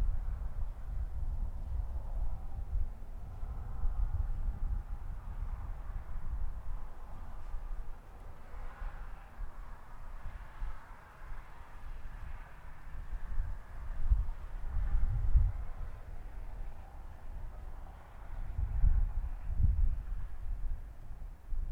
Ambient noise of wind and cars passing by at Terry Trueblood Recreation Area in Iowa City. Recorded on H4n Pro.
Iowa, United States, 23 January 2022, 09:55